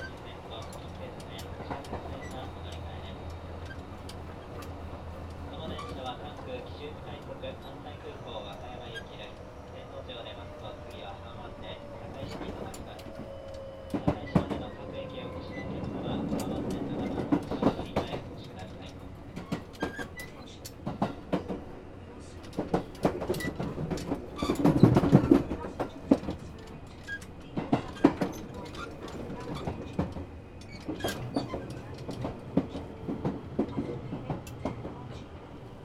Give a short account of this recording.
a metal plate/footbridge moving around in a passage of a moving JR Kansai Airport Rapid Service. various announcements during a stop on one of the stations.